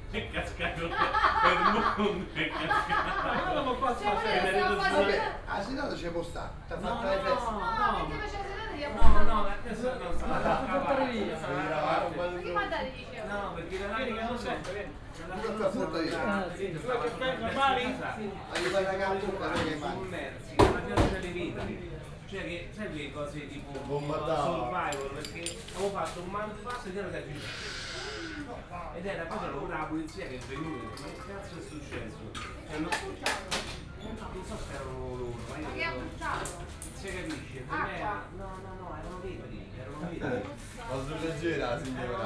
Rome, Viale di Trastevere - 'Caffe' Arabo' bar
(binaural recording)
vigorous conversations of customers and staff of a small cafe. sounds of making coffee, washing dishes, running TV, people dropping by, making quick order, having their shot of coffee, paying, thanking and leaving. all withing a split of a second.